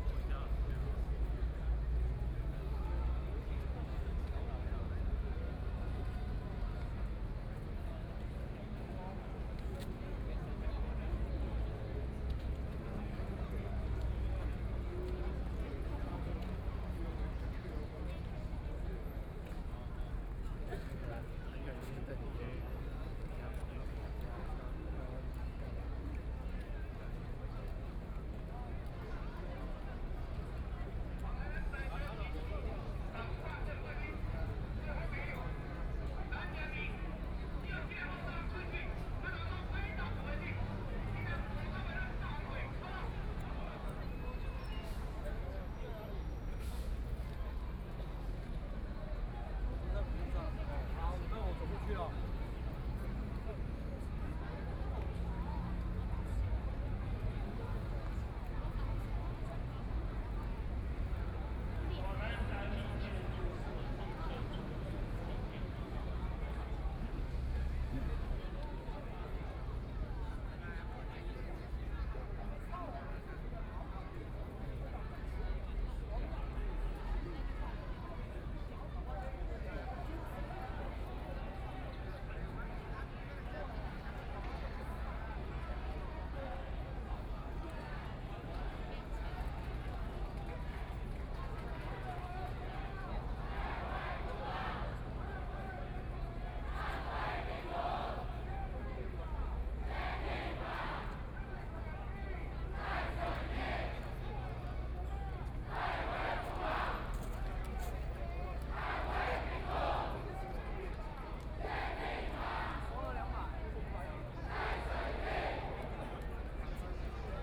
Walking around the protest area, from Qingdao E. Rd, Linsen S. Rd.Zhongxiao E. Rd.